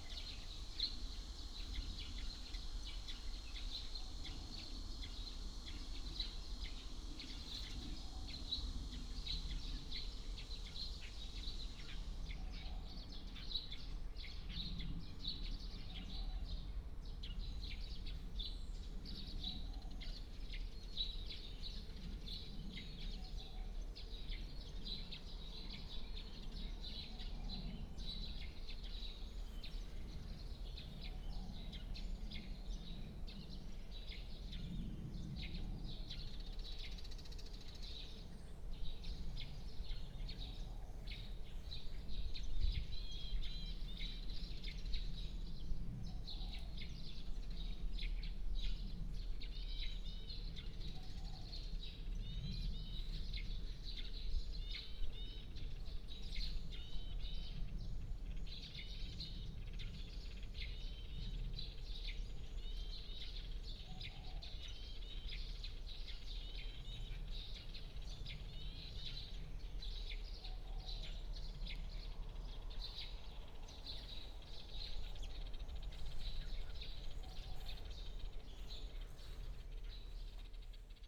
Beside the woods, traffic Sound, Bird sound, Various bird tweets
Yunlin County, Sihu Township, 雲129-1鄉道, 8 May